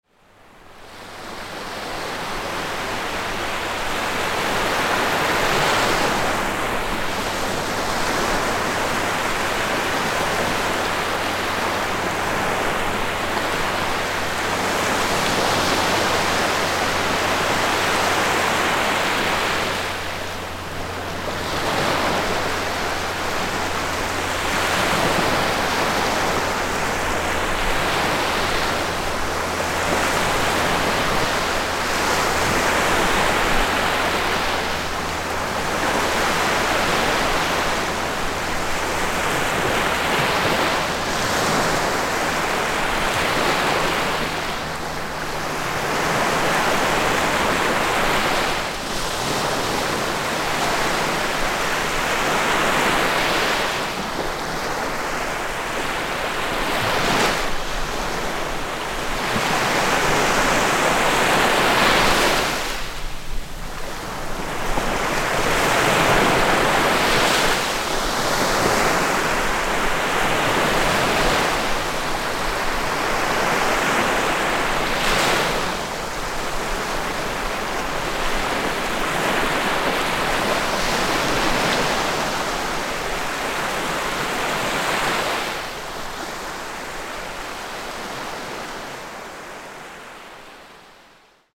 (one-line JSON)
{"title": "Colleville-Montgomery, France - Colleville 's beach", "date": "2016-05-01 16:49:00", "description": "Little waves in front on the sea, calm weather, Colleville-Montgomery, Normandie, France with Zoom H6", "latitude": "49.29", "longitude": "-0.29", "timezone": "Europe/Paris"}